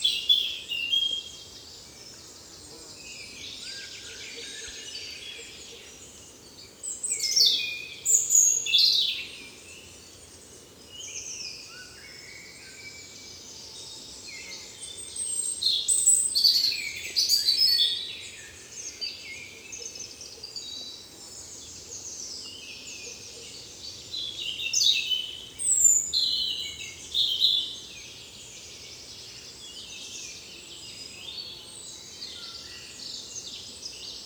Robin. At the backyard : a Blackbird and Common Chaffinch.